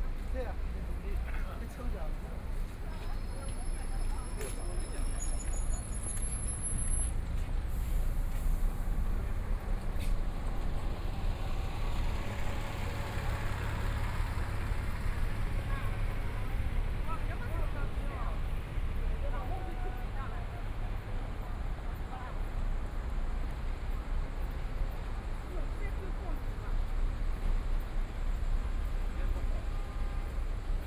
Zhangyang, Shanghai - Noon time
Noon time, in the Street, Walking through a variety of shops, Road traffic light slogan sounds, Traffic Sound, Binaural recording, Zoom H6+ Soundman OKM II
21 November 2013, 12:13